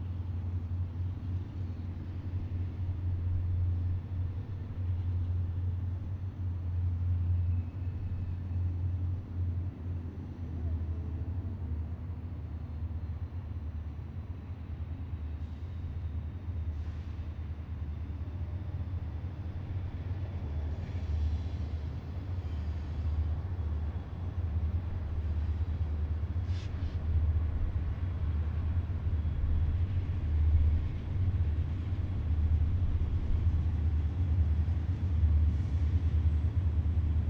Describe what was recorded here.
A CXS Freight Train Eastbound out of downtown Indianapolis. No horn because that section of track going through downtown is elevated. The trains have to slow to a crawl coming through downtown. Record on April 22, 2019 at 9:29 pm. Recorded with Sony ICD-SX712 using the recorder’s onboard mics.